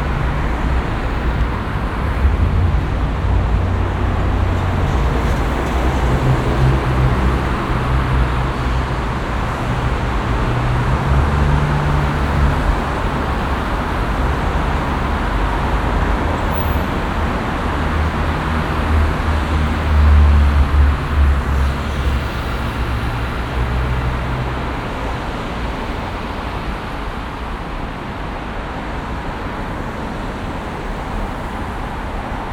vancouver, expo blvd, tunnel at bc place
4 way traffic rushing through a tunnel at bc place in the early afternoon
soundmap international
social ambiences/ listen to the people - in & outdoor nearfield recordings